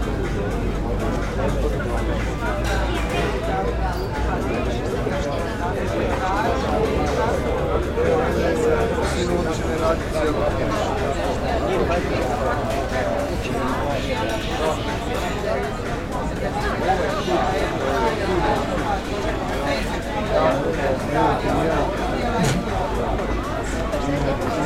Obala kralja Tomislava, Makarska, Croatie - Outside in Makarska
Outside a abr in Makarska, Croatia, Zoom H6